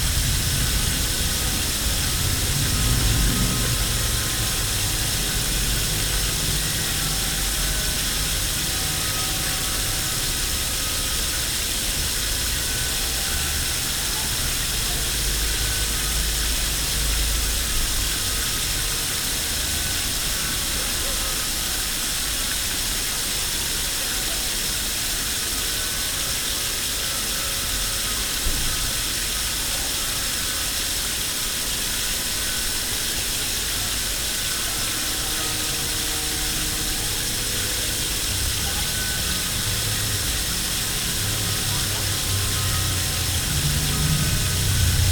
berlin, gendarmenmarkt/französische straße: sewer - the city, the country & me: water of a firehose runs into a sewer
water of a firehose runs into a sewer while the carillon bells of the french cathedral ringing in the background
the city, the country & me: july 7, 2016
7 July 2016, 11:04